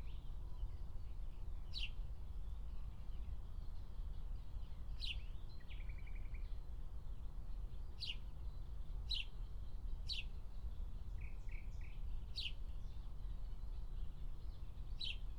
Berlin, Tempelhofer Feld - former shooting range, ambience
06:00 Berlin, Tempelhofer Feld